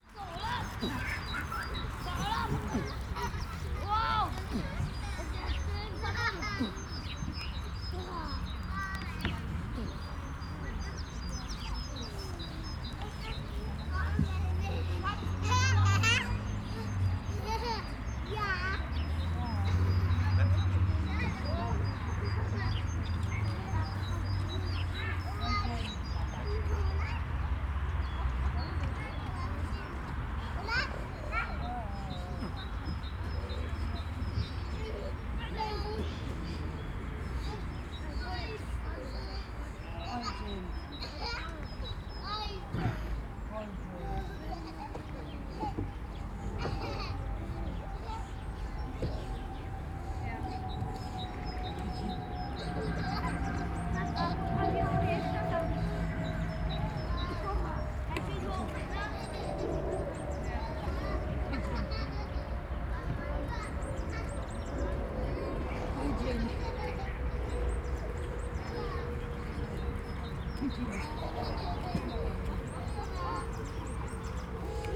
Ahrensfelde, Deutschland - playground near river Wuhle
playground ambience near river Wuhle, which is rather a narrow canal at this place, almost no flow. the place isn't very pleasant at all, and there are more grown-ups than kids.
(SD702, DPA4060)
Ahrensfelde, Germany